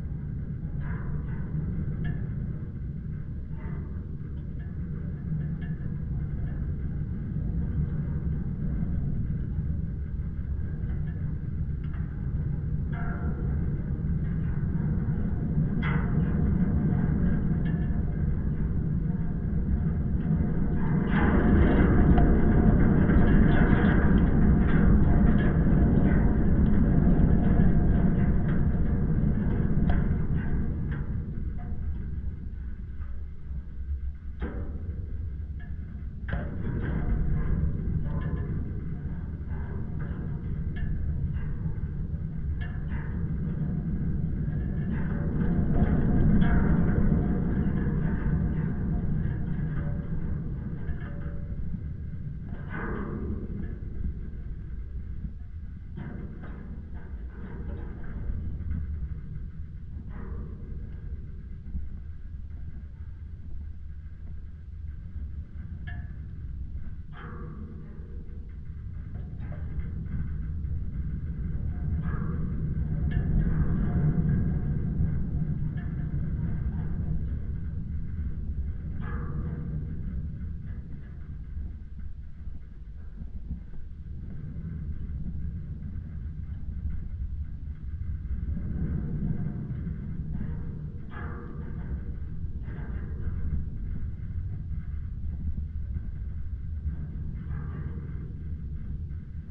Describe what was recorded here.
contact microphones on abandoned building iron wire gates